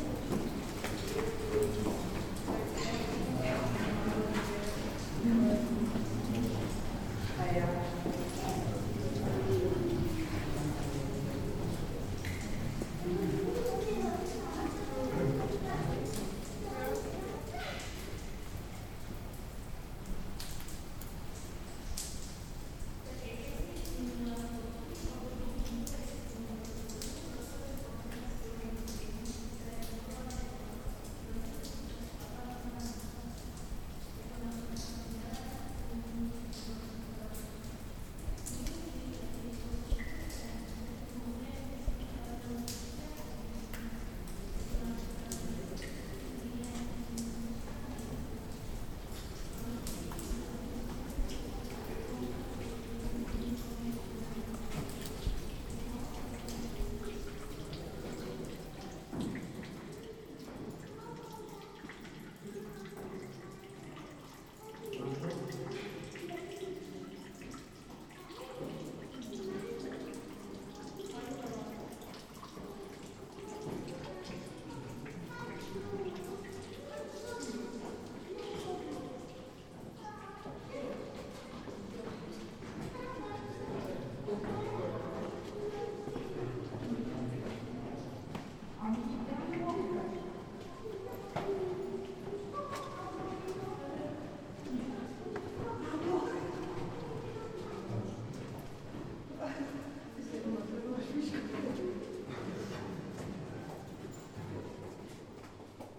Zlatý kůň, Koněprusy, Česká republika - inside the caves of Koněprusy
sounddocumentary from the tour inside the Caves
Střední Čechy, Česko, European Union, 19 June